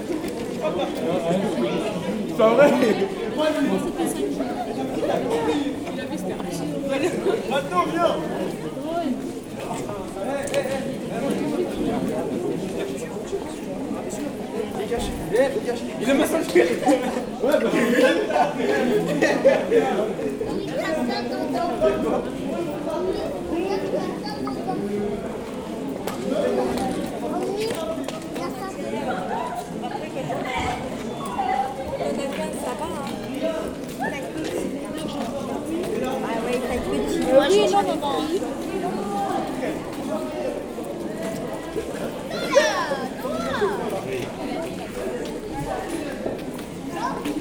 Chartres, France - Young people joking
Rue du Bois Merrain - While walking along this busy shopping street, a group of young people talks loudly, I follow them for hundred meters, until they go to the ice rink, which is flooded with tasteless commercial music.